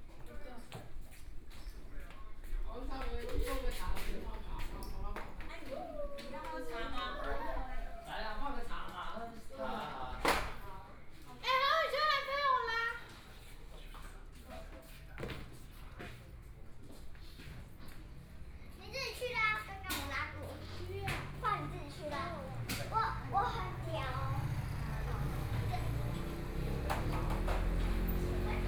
Guangming Rd., Fangyuan Township - in the Small village
in the Small village, Children are practicing the violin, Traffic Sound, Zoom H4n+ Soundman OKM II, Best with Headphone( SoundMap20140104- 2b )